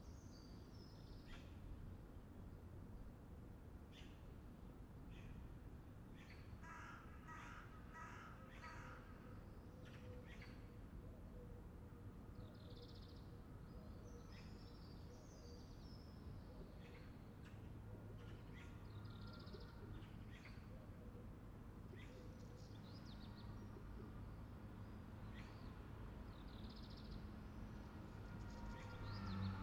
{"title": "Avenue des Cordeliers, La Rochelle, France - P@ysage Sonore - Landscape - La Rochelle COVID Parking Cité administrative La Rochelle bell tower 8h", "date": "2020-04-27 07:57:00", "description": "small traffic on Monday morning\n8 am bell at 2'17 with tractor mower.....\n4 x DPA 4022 dans 2 x CINELA COSI & rycote ORTF . Mix 2000 AETA . edirol R4pro", "latitude": "46.16", "longitude": "-1.14", "altitude": "12", "timezone": "Europe/Paris"}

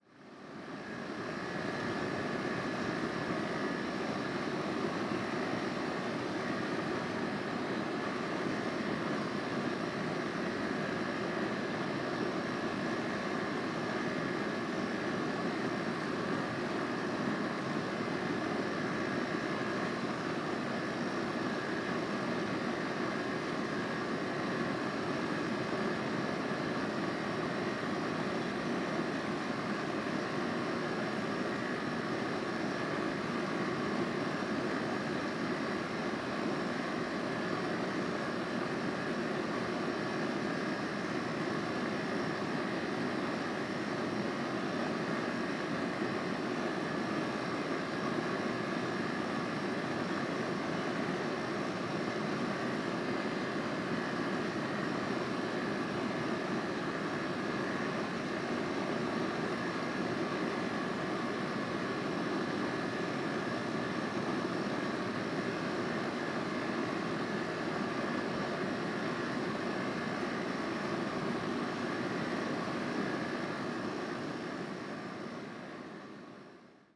{"title": "Suffex Green Ln NW, Atlanta, GA, USA - Air Conditioning In February!", "date": "2019-02-16 16:52:00", "description": "Yep, you read that correctly...\nfor some wacky reason, somebody who lives around this area had their air conditioning unit on in February (one of our coldest months here, btw). I captured this behind my house out near a wooden fence and some bushes. I was probably trying to record some sounds from the wooded area outside of the apartment, but this got recorded instead.", "latitude": "33.85", "longitude": "-84.48", "altitude": "295", "timezone": "America/New_York"}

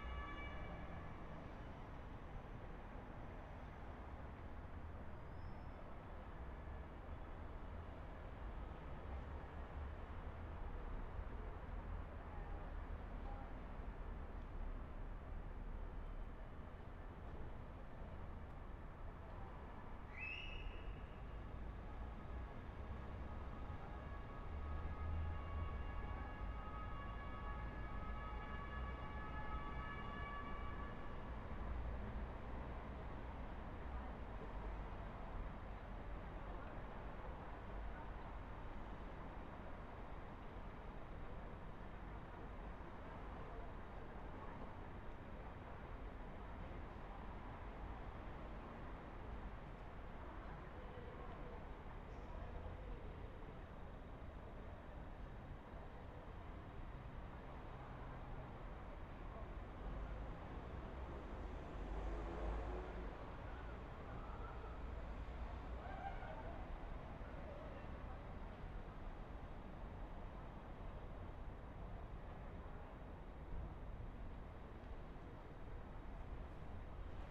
{"title": "Tiergarten, Βερολίνο, Γερμανία - 13 days before the world-listening-day 2013", "date": "2013-07-05 22:30:00", "description": "sex-workers in trouble../ dogs&motors&etc. / siren-doppler-effect (independent event* from the latter)/[XY-recording-mic. In a second-floor-room with an open-window]", "latitude": "52.50", "longitude": "13.36", "altitude": "38", "timezone": "Europe/Berlin"}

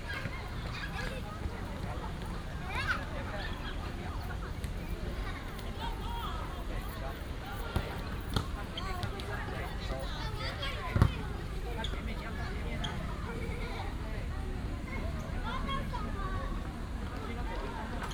{"title": "Ziqiang Elementary School, Zhonghe District - In the playground", "date": "2017-04-30 17:35:00", "description": "Many people In the playground, sound of the birds, Child", "latitude": "25.00", "longitude": "121.47", "altitude": "11", "timezone": "Asia/Taipei"}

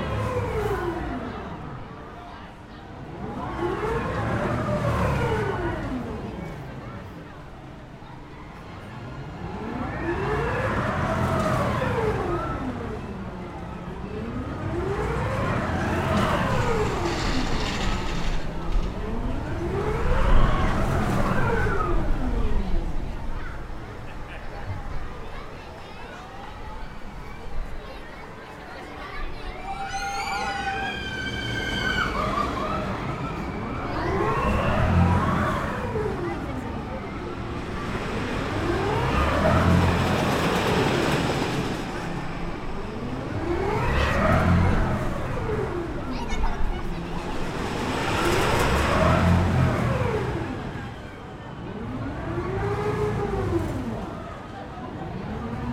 {"title": "Djurgården, Östermalm, Stockholm, Suecia - Gröna Lund", "date": "2016-08-09 16:13:00", "description": "Parc d'atraccions.\nTheme Park.\nParque de atracciones.", "latitude": "59.32", "longitude": "18.10", "altitude": "8", "timezone": "Europe/Stockholm"}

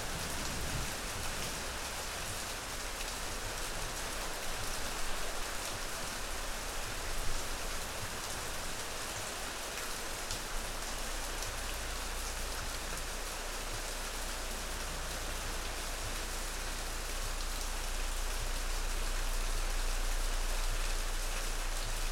{"title": "R. Geira, Portugal - Rain Thunderstorm - Rain and Thunderstorm - Campo Geres", "date": "2018-09-05 15:36:00", "description": "Heavy rain with thunderstorm at campo de Geres, recorded with SD mixpre6 and a pair of primo 172 omni mics in AB stereo configuration.", "latitude": "41.76", "longitude": "-8.20", "altitude": "639", "timezone": "GMT+1"}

